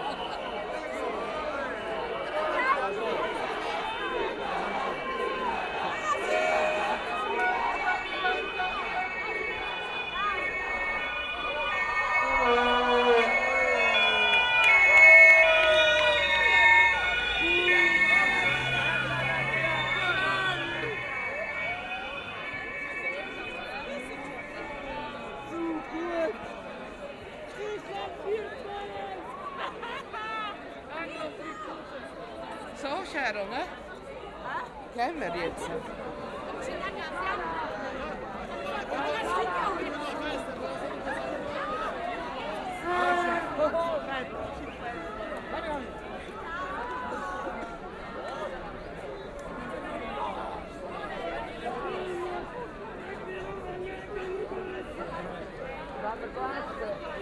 {
  "title": "Fans, Police, Circle, Aarau, Schweiz - Italian Fans 2",
  "date": "2016-06-27 20:25:00",
  "description": "After Italy won against Spain in the European Championship fans are meeting on the street, at a circle, at a certain point the police is arriving.",
  "latitude": "47.39",
  "longitude": "8.05",
  "altitude": "390",
  "timezone": "Europe/Zurich"
}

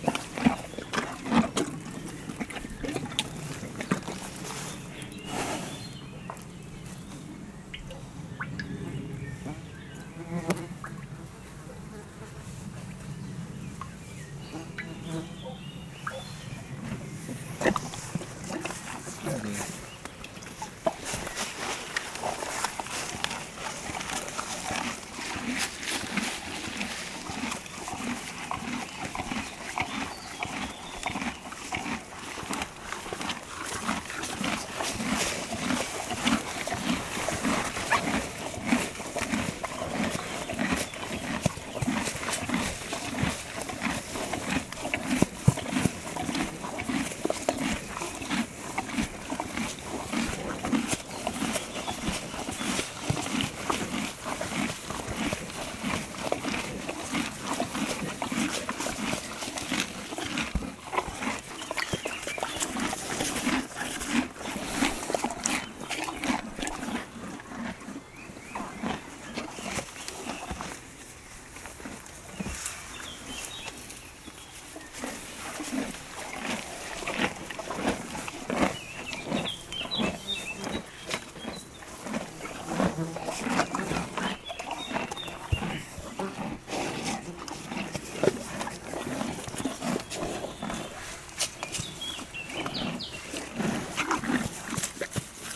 {
  "title": "Montagnole, France - Horses eating",
  "date": "2017-06-05 17:10:00",
  "description": "Coming back from the mine, we found horses near the car, looking at us. We gave them green grass, it was so good ! Sometimes drinking, sometimes with the flies.",
  "latitude": "45.53",
  "longitude": "5.93",
  "altitude": "605",
  "timezone": "Europe/Paris"
}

